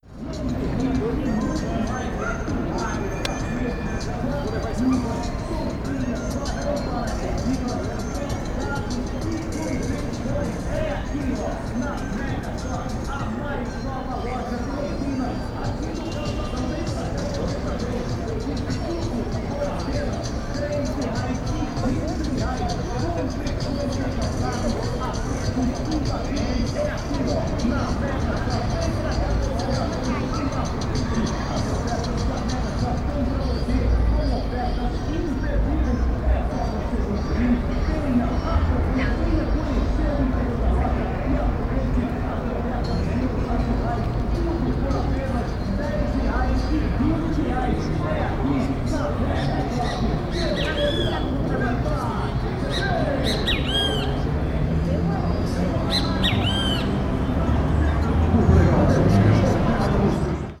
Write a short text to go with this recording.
4 reproduções4 Mais estatísticas, 5 seguidores5 12 faixas12, Panorama sonoro gravado no Calçadão de Londrina, Paraná. Categoria de som predominante: antropofonia (comércio, veículos e vozes). Condições do tempo: ensolarado. Data: 06/08/2016. Hora de início: 09:57, Equipamento: Tascam DR-05. Classificação dos sons, Antropofonia: Sons Humanos: Sons da Voz; Fala. Sons da Sociedade: Sons do Comércio; Música de Lojas; Anuncio e Promoções. Biofonia: Sons Naturais: Sons de Pássaros; Sons de Animais; Cachorro. Sound panorama recorded on the Boardwalk of Londrina, Paraná. Predominant sound category: antropophony (trade, vehicles and voices). Weather conditions: sunny. Date: 08/08/2016. Start time: 09:57, Hardware: Tascam DR-05. Classification of sounds, Human Sounds: Sounds of the Voice; Speaks. Sounds of the Society: Sons of Commerce; Music Stores; Advertisement and Promotions. Biophony: Natural Sounds: Bird Sounds; Animal sounds; Dog.